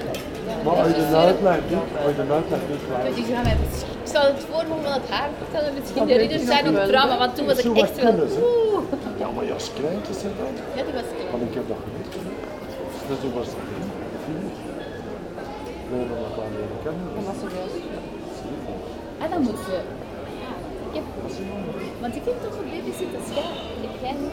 Leuven, Belgique - People enjoying the sun
Into the main commercial artery, people enjoy the sun and discuss quietly.